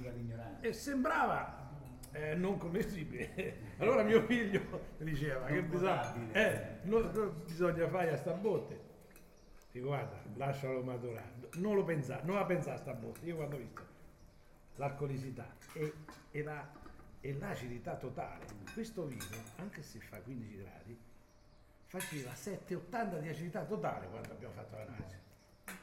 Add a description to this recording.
food gallery-nutrirsi di arte cultura territorio, #foodgallery